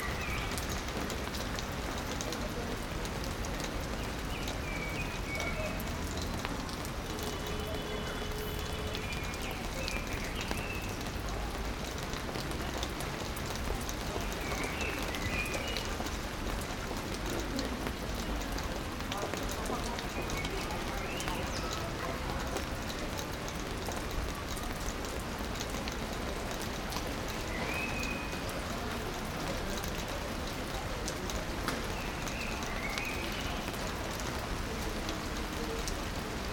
Liberation, Nice, France - Binaural rain next to tram line
Standing under a plastic cover sheltering from the rain. Trams pass, people walk by, a bird sings and dogs bark.
Recorded with 2 Rode Lavalier mics attached to my headphones to give an (imperfect) binaural array, going into a Zoom H4n.
26 February 2016, 17:13